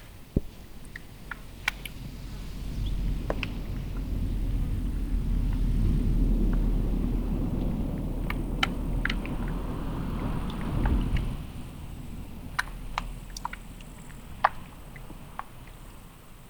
Turku University Botanical Garden, Turku, Finland - Water lilies crackling on a pond
A warm day at the Turku University Botanical Garden. The numerous water lilies make a distinct crackling sound. Zoom H5 with default X/Y module. Gain adjusted and noise removed in post.
Varsinais-Suomi, Manner-Suomi, Suomi, 17 July, 16:36